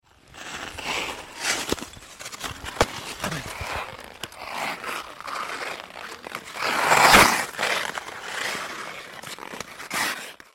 Montreal: Parc Mont Royal - Parc Mont Royal
equipment used: Zoom H2 stereo field recorder
Skating it up with chums!
Montreal, QC, Canada, 28 February 2009